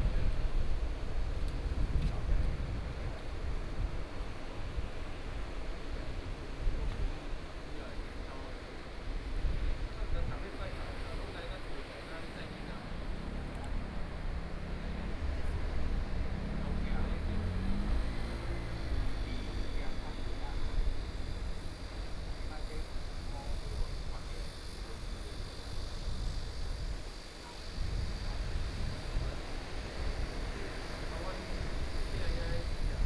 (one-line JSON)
{"title": "永鎮海濱公園, Yilan County - At the beach", "date": "2014-07-26 15:06:00", "description": "At the beach, Sound wave, Birdsong sound, Small village\nSony PCM D50+ Soundman OKM II", "latitude": "24.77", "longitude": "121.82", "altitude": "15", "timezone": "Asia/Taipei"}